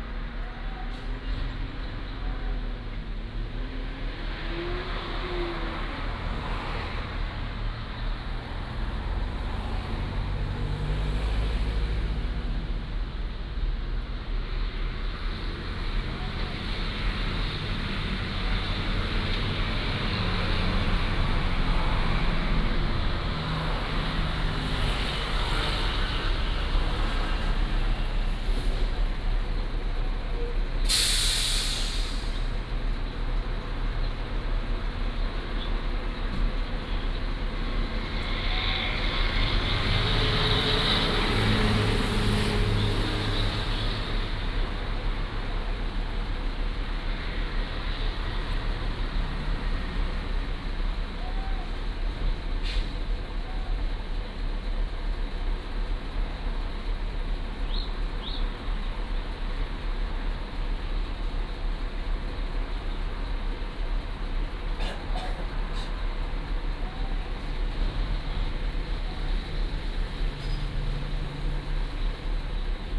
桃米里, Puli Township - At the junction
At the junction, Traffic Sound, Birdsong
Puli Township, 桃米巷, 29 April, 07:58